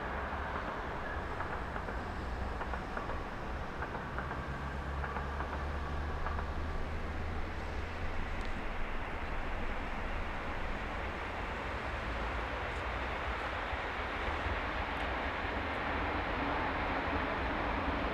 Olsztyn, Polska - West train station (4)
Train announcement. Train arrival, departure and at the same momoent cargo train is passing by.
Olsztyn, Poland